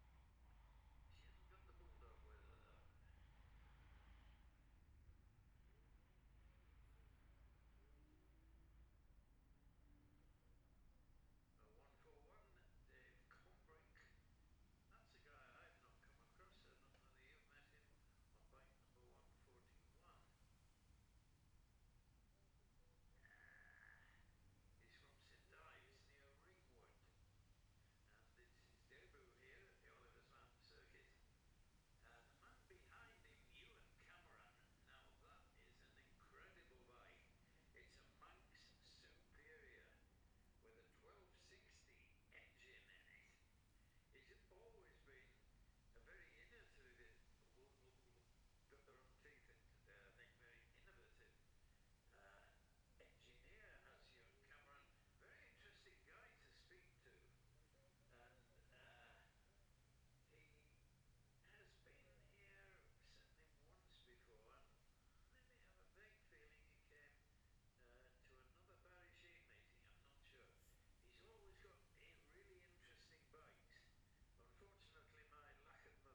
Jacksons Ln, Scarborough, UK - gold cup 2022 ... classic s'bike practice ...
the steve henshaw gold cup 2022 ... classic superbike practice ... dpa 4060s clipped to bag to zoom h5 ...